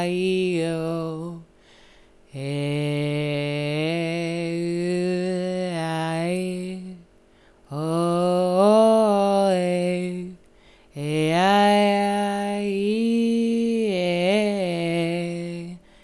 rue du lorgeril, Rennes, France - discussion sonore
"dead drops sonore à distance"
Questionnement et détournement du langage sont les sujets abordés à travers l’installation de ces deux Deads Drops sonores. Entre la ville de Rennes et Barcelone les fichiers sonores contenus dans ces Dead Drops constituent un moyen de communication par l’utilisation d’un langage abstrait, voire d’un nouveau langage, à la manière d’Isidore Isou dans son œuvre « traité de brave et d’éternité » ou encore Guy Debord qui explore le détournement au près des lettristes.
Dans la dead drop de Barcelone se trouve l’élocution des consonnes de la description du projet, alors que dans celle de Rennes l’élocution des voyelles. Cela opère donc une discussion entre les deux villes par un dialogue de mise en abîme à la sonorité absurde faisant appel à la notion de répétition et de non-sens.